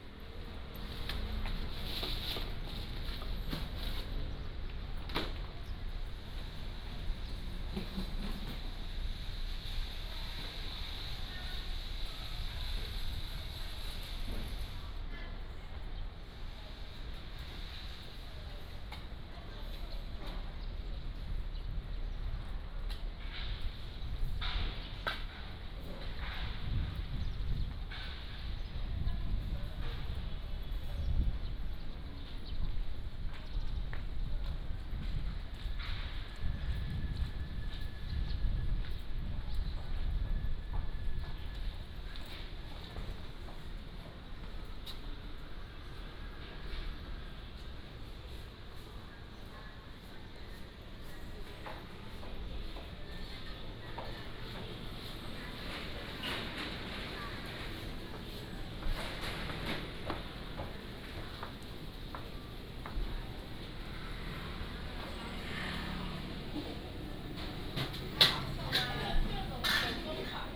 西門市場, 台南市東區 - Old market
Old market, Is being renovated, Walking in the traditional market, A small number of stores in business
Tainan City, Taiwan, February 2017